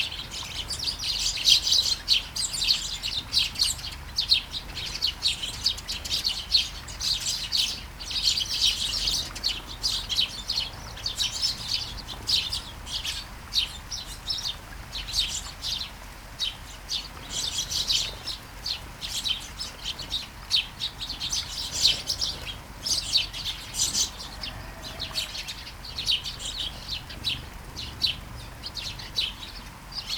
Kærengen, Taastrup, Denmark - Flying sparrows
Flying sparrows in the garden, early morning. Light shower.
Vols de moineaux dans le jardin, au petit matin. Pluie fine.
30 July 2017, 05:55